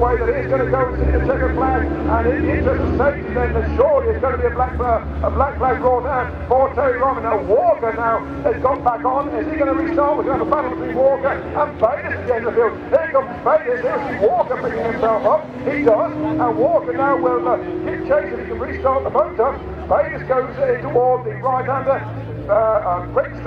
Silverstone Circuit, Towcester, UK - BSB 1998 ... Superbikes ... Race 1 ...
BSB 1998 ... Superbikes ... Race 1 ... commentary ... one point stereo mic to minidisk ... almost the full race distance ... time is optional ...